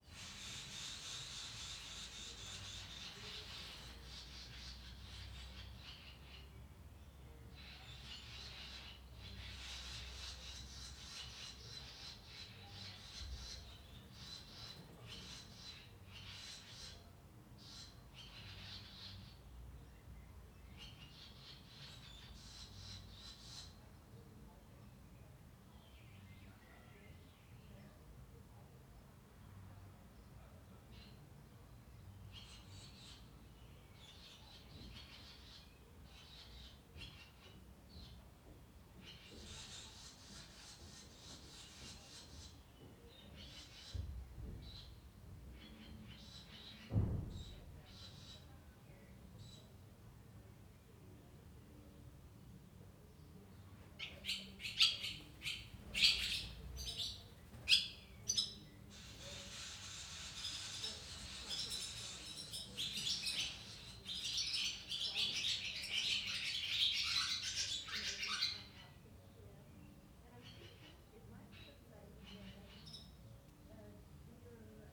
Fahrenwalde, Deutschland - Broellin - Swallows in the cellar
[Hi-MD-recorder Sony MZ-NH900, Beyerdynamic MCE 82]